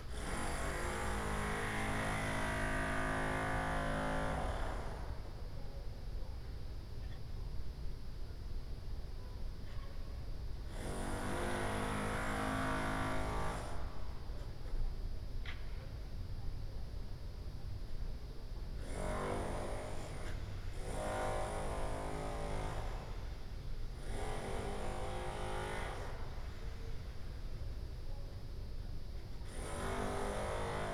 Ascolto il tuo cuore, città. I listen to your heart, city. Several chapters **SCROLL DOWN FOR ALL RECORDINGS** - Morning Autumn terrace in A-flat in the time of COVID19 Soundscape
"Morning Autumn terrace in A-flat in the time of COVID19" Soundscape
Chapter CXLI of Ascolto il tuo cuore, città. I listen to your heart, city
Thursday November 12th, 2020. Fixed position on an internal terrace at San Salvario district Turin, sixth day of new restrictive disposition due to the epidemic of COVID19.
Start at 10:30 a.m. end at 10:52 a.m. duration of recording 22'06''
Torino, Piemonte, Italia